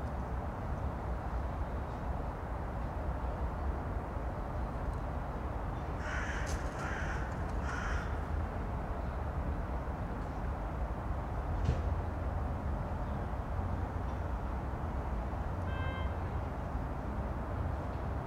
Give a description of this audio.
territory of Lithuania cinema studio. decoration castle was built for some movie. now it's like some half abandoned territory, warehouse.